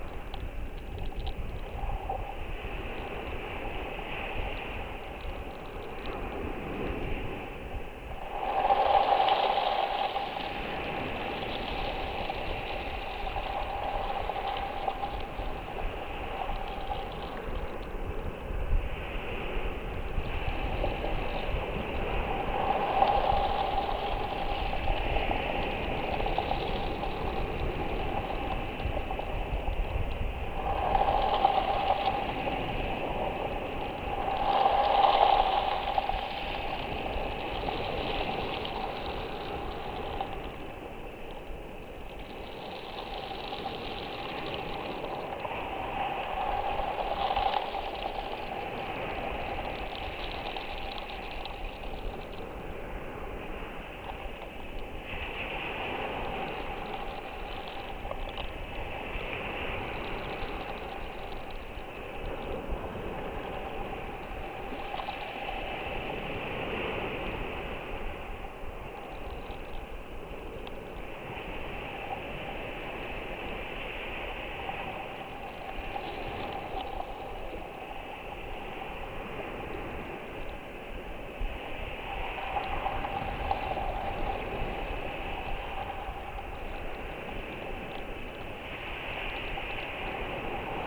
Covehithe, UK - Waves and small pebbles washing a tree trunk on the beach contact mic
Waves and small pebbles washing along a tree trunk half in the sea recorded with a contact mic. It is the same recording as in the mix above but heard on its own.
England, United Kingdom